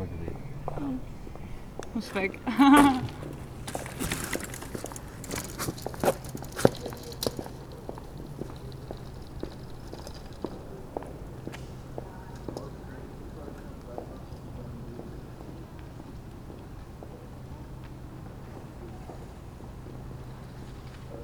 Berlin: Vermessungspunkt Friedel- / Pflügerstraße - Klangvermessung Kreuzkölln ::: 12.10.2011 ::: 02:38
Berlin, Germany, 12 October 2011